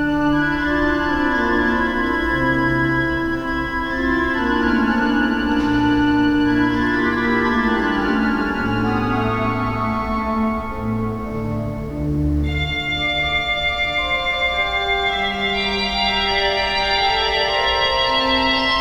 Organ concert Marienkirche - 3/7 Organ concert Marienkirche
03 Antonio Vivaldi_ Adagio in D mol (Arranged for organ by J.S. Bach)